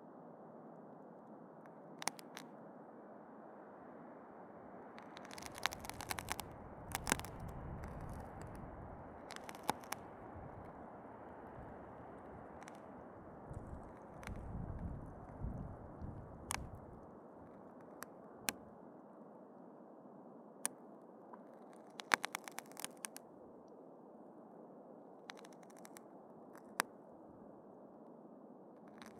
Utena, Lithuania - between birch and pine
I found some symbiosis in the trees: birch and pine almost merged together. swaying in the wind their "conjugation" makes this subtle cracking micro sound